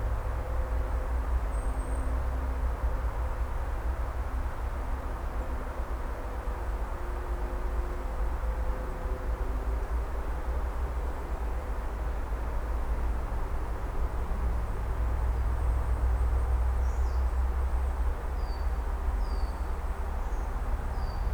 small tunnel under railroad with strange resonance, 35 meters long, 1,5 meter wide, at one end 3 meters high, other end 1.6 meters high. Recorded using 2 shotgun microphones: right channel at one end pointing to the forest, left channel at the other end pointing inside the tunnel.
Hann. Münden, Germany, 6 August 2014